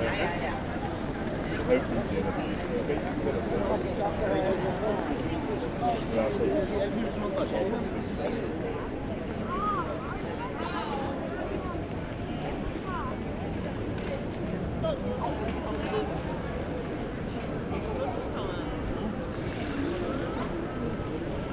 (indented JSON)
{
  "title": "Den Haag, Nederlands - Den Haag center",
  "date": "2019-03-30 13:00:00",
  "description": "A long walk into the center of Den Haag, during a busy and enjoyable Saturday afternoon. In first, the very quiet Oude Molsstraat, after, Grote Halstraat with tramways, the reverb in the « Passage » tunnel, the very commercial Grote Marktstraat. Into this street, I go down in the underground tramways station called Den Haag, Spui, near to be a metro station. A very intensive succession of tramway passages. Escalator doing some big noises, and going back outside. Into the Wagenstraat, some street musicians acting a automatic harmonium. Den Haag is a dynamic and very pleasant city.",
  "latitude": "52.08",
  "longitude": "4.31",
  "altitude": "2",
  "timezone": "Europe/Amsterdam"
}